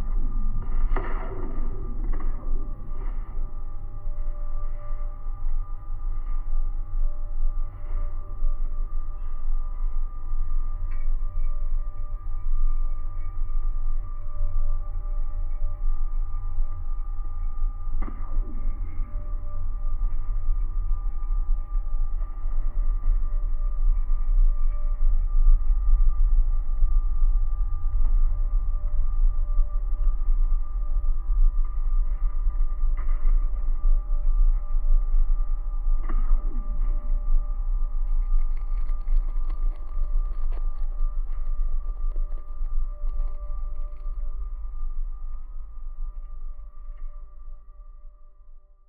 Taujenai, Lithuania, cell tower
cell tower support wires. recorded with two contact mics and geophone. low frequencies.
10 May, ~15:00